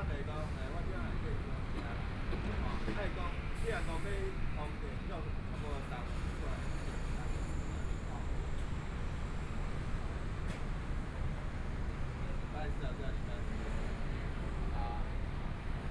Linsen N. Rd., Zhongshan Dist. - soundwalk
Walking on the road （ Linsen N. Rd.）from Nanjing E. Rd. to Minsheng E. Rd., Traffic Sound, Binaural recordings, Zoom H4n + Soundman OKM II
Taipei City, Taiwan